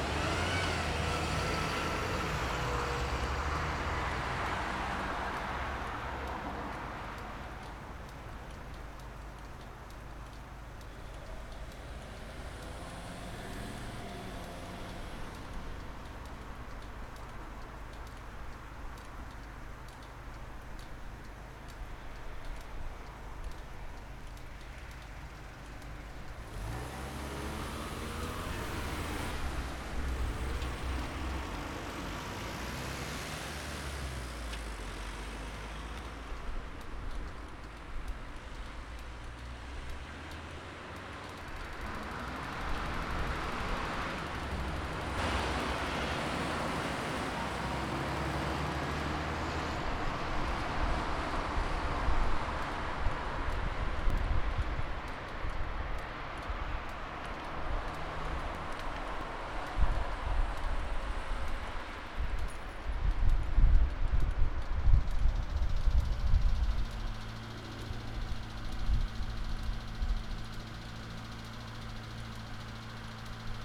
Tongelresestraat, Eindhoven
Queens Night 2010-04-30 00:22, Traffic Lights, traffic
Noord-Brabant, Nederland, European Union